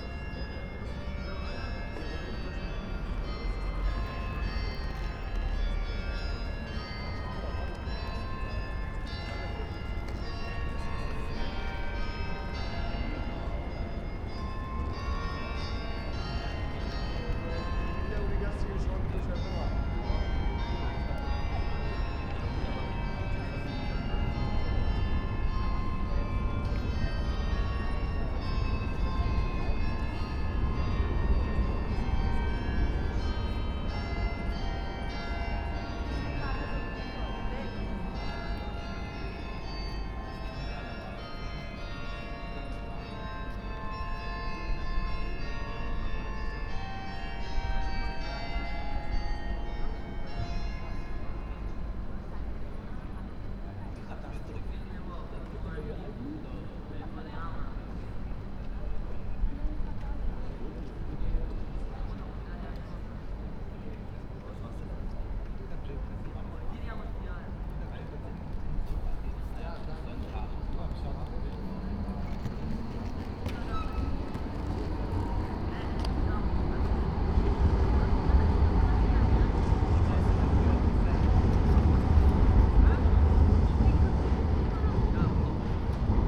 Sunday evenig at Marktplatz, Halle. No cars around, surprising. Sound of trams, 6pm bells
(Sony PCM D50, Primo EM172)